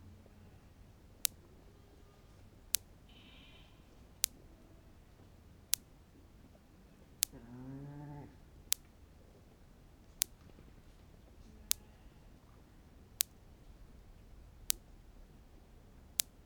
an electrical fence produces a sparkover in the moistly grass.
(Sony PCM D50)
Germany